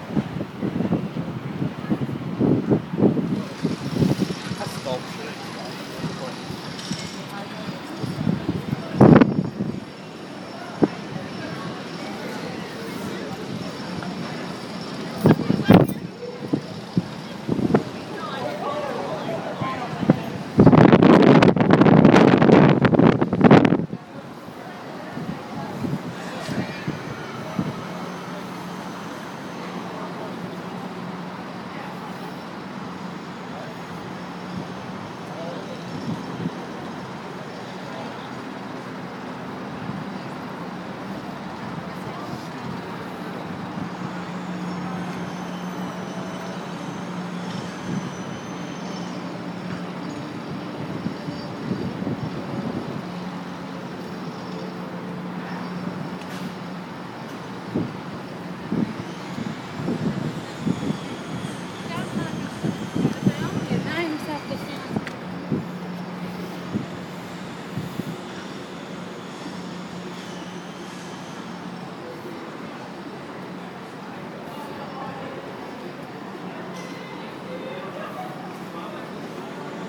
Chelsea, New York, NY, USA - Highline Walk
The High Line is a 1-mile (1.6 km) New York City linear park built on a 1.45-mile (2.33 km)section of the former elevated New York Central Railroad spur called the West Side Line, which runs along the lower west side of Manhattan; it has been redesigned and planted as an aerial greenway. The High Line Park currently runs from Gansevoort Street, three blocks below West 14th Street, in the Meatpacking District, up to 30th Street, through the neighborhood of Chelsea to the West Side Yard, near the Javits Convention Center.
I walked the extent of the Highline at different times of day, from South to North, recording the natural, human, and mechanical sounds that characterize this unique place.
7 September 2012, 15:00